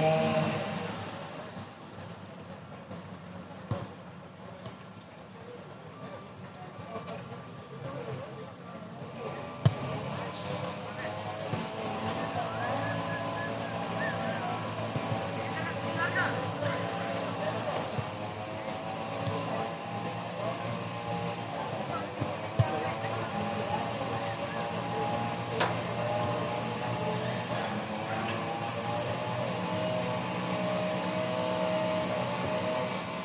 Br. Nueva Tibabuyes-KR 121C - CL 129D, Bogotá, Colombia - Barrio Nueva Tibabuyes
Paisaje sonoro horas de la mañana (8:00am)